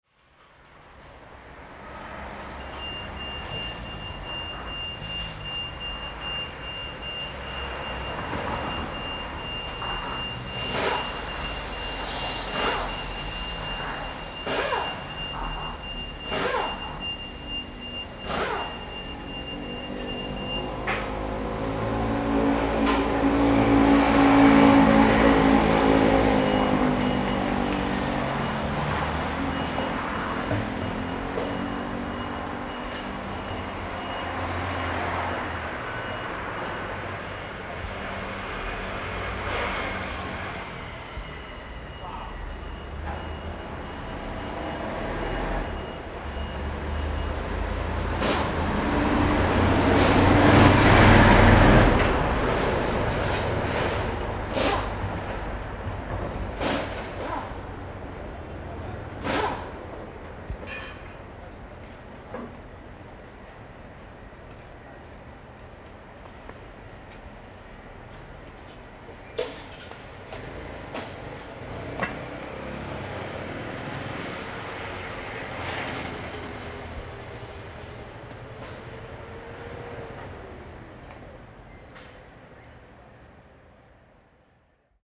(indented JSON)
{
  "title": "Da'an District, Taipei - The entrance to the park",
  "date": "2012-02-06 11:30:00",
  "description": "Across the garage noise, traffic noise, Sony ECM-MS907, Sony Hi-MD MZ-RH1",
  "latitude": "25.02",
  "longitude": "121.55",
  "altitude": "24",
  "timezone": "Asia/Taipei"
}